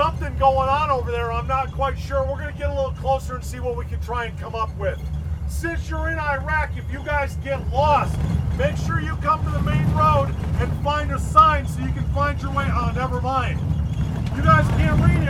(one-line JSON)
{"title": "MCAGCC Twenty Nine Palms, Twentynine Palms, CA, USA - Simulation Iraqi village Twentynine Palms", "date": "2012-04-18 10:53:00", "description": "Tour guide setting the stage as we approach the simulated Iraqi village named Wadi al-Sahara.", "latitude": "34.25", "longitude": "-116.02", "altitude": "634", "timezone": "America/Los_Angeles"}